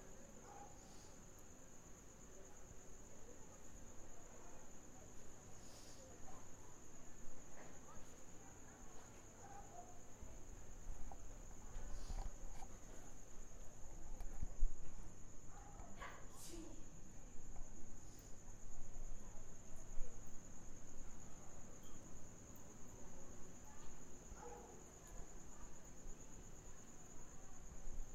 ESSE SOM FOI CAPTADO PARA ATIVIDADE DA DISCIPLINA DE SONORIZACAO, SOLICITADA PELA DOCENTE MARINA MAPURUNGA. EU CAPTEI O SOM DA RUA DA MINHA CASA.